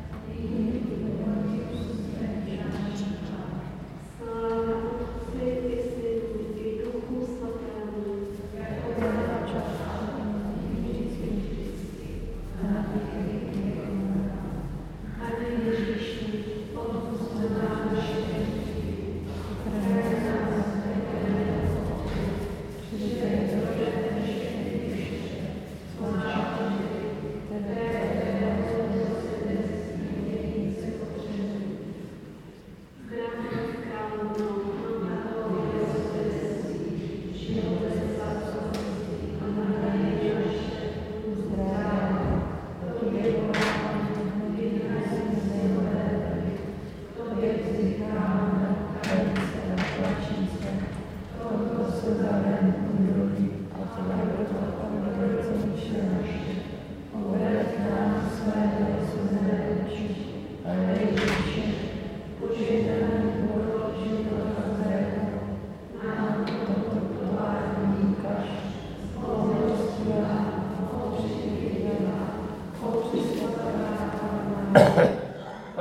Sv. Bartolomej Prayers - Sv. Bartolomej
Prayer at the 11:30 am Mass Service of an April Saturday